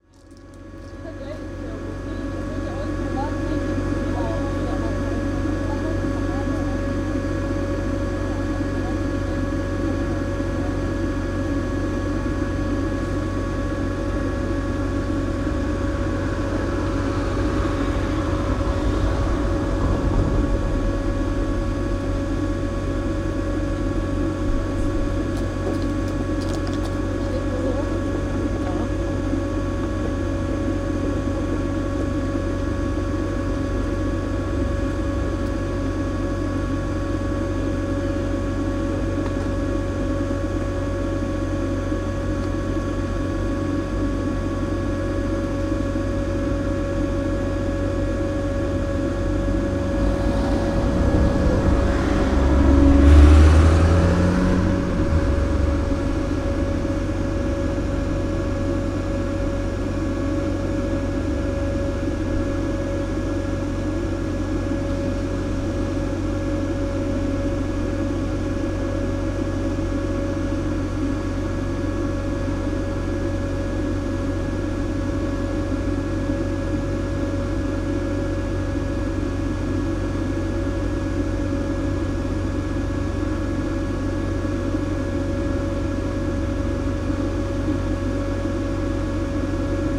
another maribor2012 inflated globe, this one on the banks of the river.
14 June 2012, 22:25